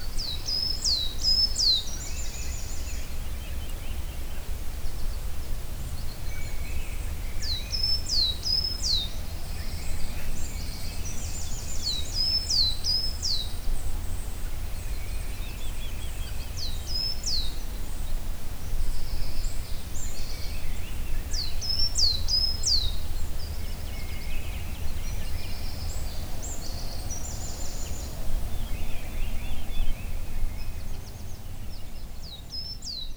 Quézac, France - Peaceful morning
After an horrible night, attacked by a dog, here is a peaceful morning in the forest, sun is awakening.
4 March 2015, ~06:00